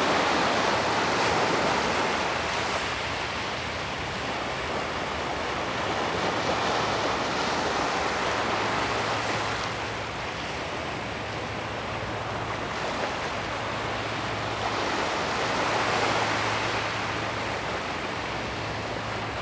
淡水區崁頂里, New Taipei City - the waves
at the seaside, Sound waves
Zoom H2n MS+XY + H6 XY
Tamsui District, New Taipei City, Taiwan, 5 April